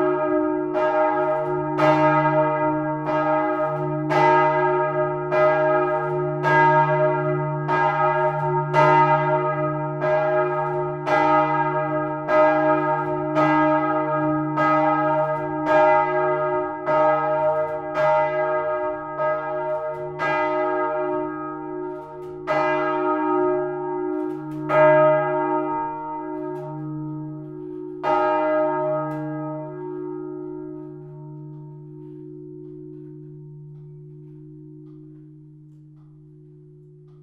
essen, old catholic church, bells - essen, friedenskirche, glocken
After listening to the ensemble of bells, you can now listen to the single bells each recorded seperately - starting with the biggest one.
Projekt - Klangpromenade Essen - topographic field recordings and social ambiences
10 June 2011, 10:54